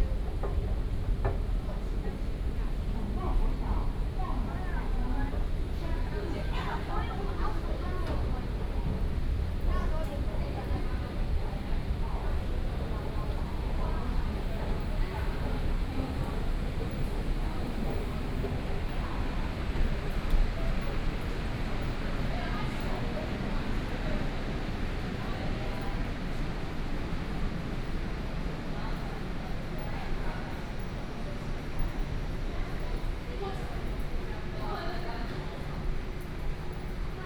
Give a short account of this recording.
Walking through the small alley, Then went into the MRT station, Traffic Sound